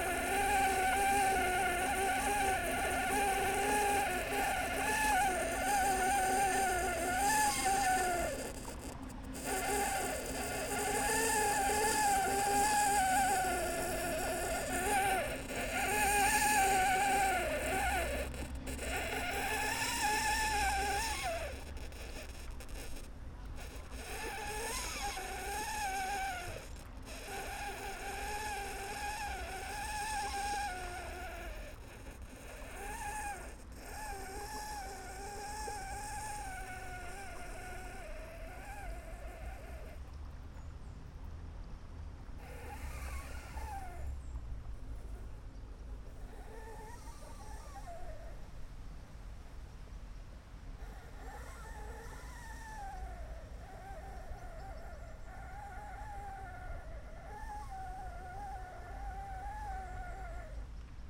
All. Jules Guesde, Toulouse, France - Turbine qui couine
Quand ça coince, ça couine. Voici le son d'une turbine de fontaine obstruer par des feuilles. Un son cocasse !
Enregistré avec:
Neumann KM184 ORTF
ZoomF6
France métropolitaine, France, 5 September 2022, 10:33